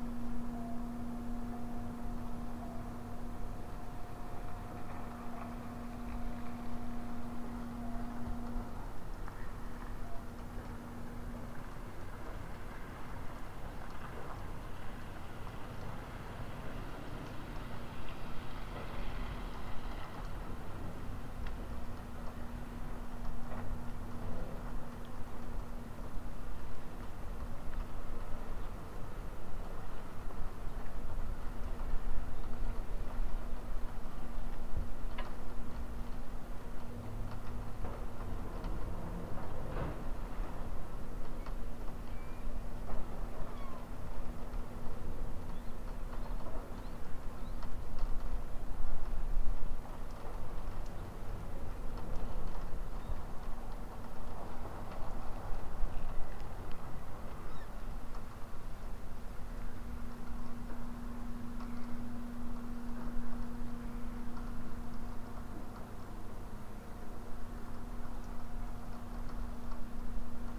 Port Hardy, BC, Canada - What Happens At The End Of The World
closer of Coast Guardian and airport for seaplane/ raining and desert day/
Recording with love